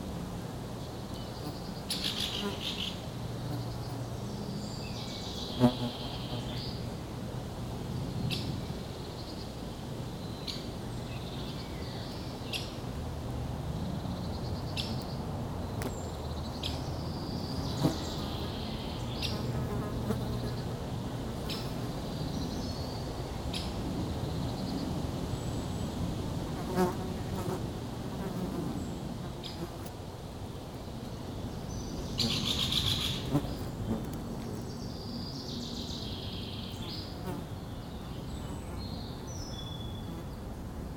Ottignies-Louvain-la-Neuve, Belgique - One hour in the crazy life of a dung
Process is simple. I was walking in the Lauzelle forest. I found the place uninteresting mainly because of the quite crowded people here, and also the motorway far distant noise. But, wind in the trees was beautiful. I encontered an horse and... a big dung fall onto the ground. The flies went immedialtly on it. I put the two microphones into the hot poop and all was made, that's all I can say. It's like that, on a hot and lazy public holiday, walkers saw a stupid guy recording a dung during an hour !
25 May 2017, ~15:00